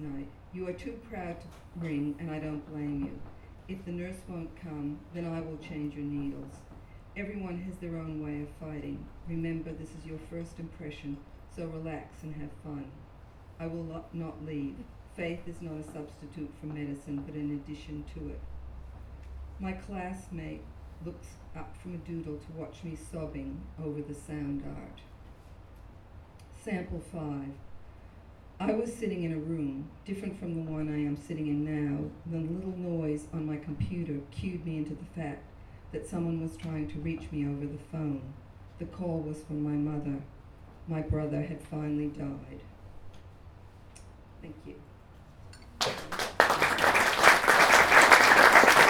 neoscenes: Norie at Glee Books
Glebe New South Wales, Australia